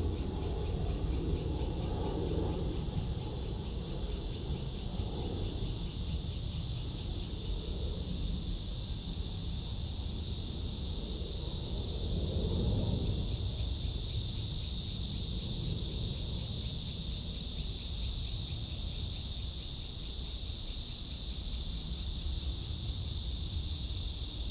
Near the airport, traffic sound, Cicada cry, MRT train passes, The plane took off
Zoom H2n MS+XY